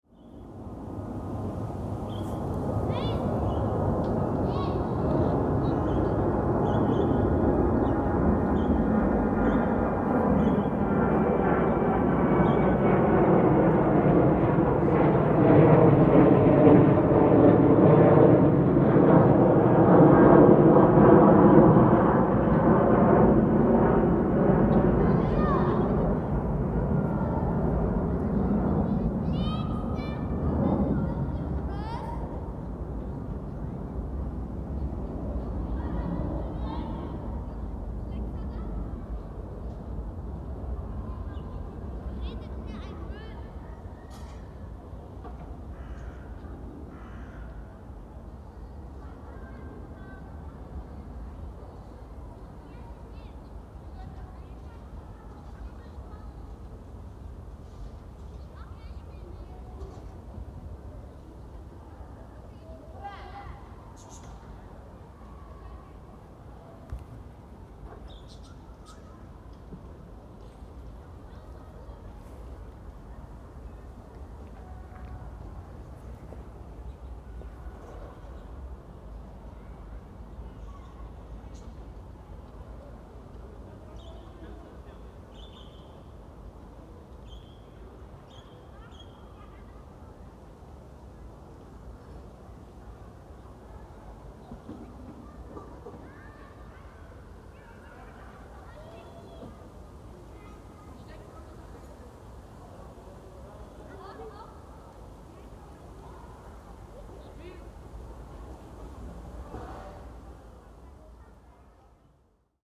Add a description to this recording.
Low planes pass by so regularly that they mark the time. The next arrives in five minutes. In June 2012 Tegel airport is to close and planes will no longer fly here. Will the people be disturbed by their absence?